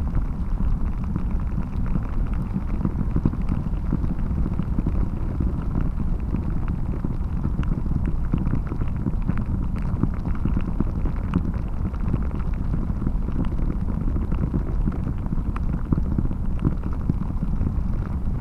Andreikėnai, Lithuania, water spring
HYdrophone was buried in sand and stones near spring to discower low rumble of water running in the ground
Utenos apskritis, Lietuva